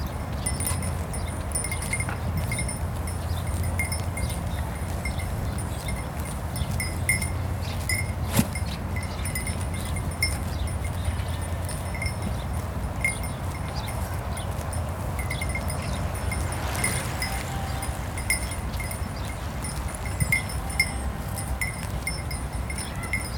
Rue Dinetard, Toulouse, France - grazing sheep
grazing sheep, bird, highway in the background
Capation : ZOOMH4n
Occitanie, France métropolitaine, France, 15 April 2022, 19:00